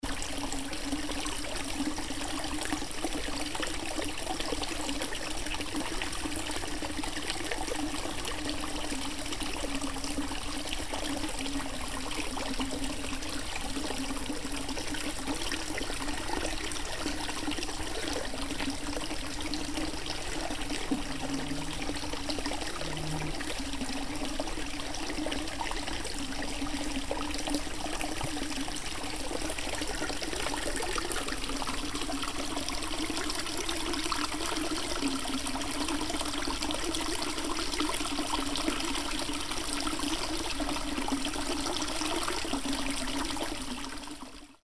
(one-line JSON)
{"title": "Malinica, Klana, water stream", "date": "2008-07-08 12:30:00", "description": "Water stream below a small concrete bridge - you can hear a special: \"concrete reverb effect\" on this recording (-.", "latitude": "45.47", "longitude": "14.38", "altitude": "607", "timezone": "Europe/Ljubljana"}